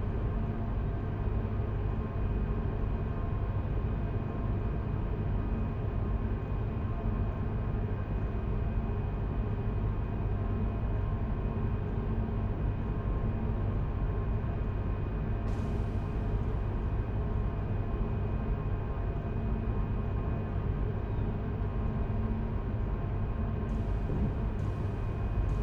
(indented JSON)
{
  "title": "Stadt-Mitte, Düsseldorf, Deutschland - Düsseldorf, Schauspielhaus, big stage",
  "date": "2012-12-15 13:45:00",
  "description": "On the big stage of the theatre.\nThe sound of the stage and light ventilation. Some small accents by background steps and doors from the sideways.\nThis recording is part of the intermedia sound art exhibition project - sonic states",
  "latitude": "51.23",
  "longitude": "6.78",
  "altitude": "43",
  "timezone": "Europe/Berlin"
}